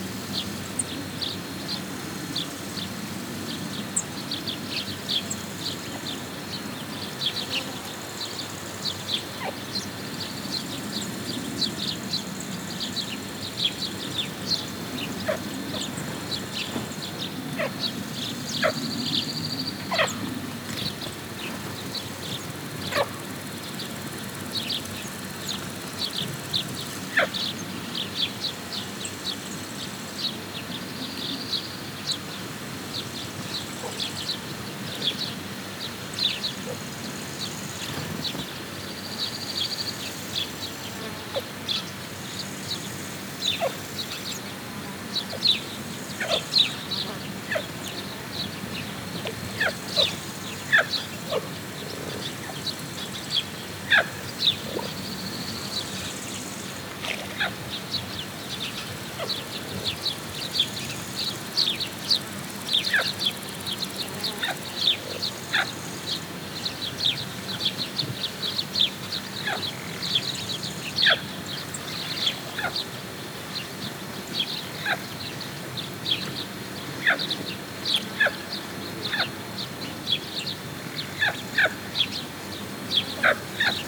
SBG, El Pujol - Tarde
Aves, ranas, el viento y como contrapunto, el sonido contínuo del motor de la bomba de agua en la balsa.